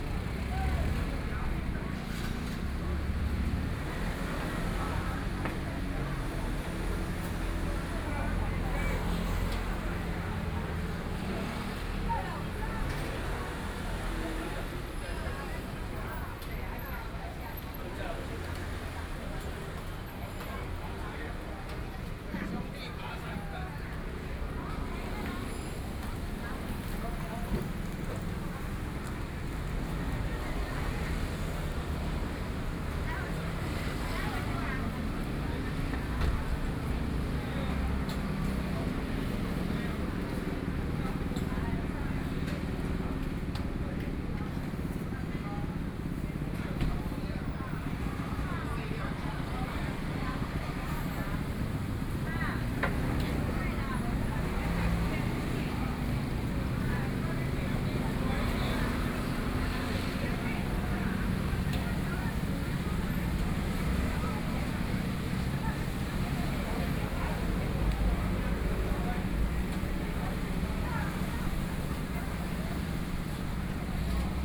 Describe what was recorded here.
In the corner, Traffic Sound, Cries of street vendors, Traditional Market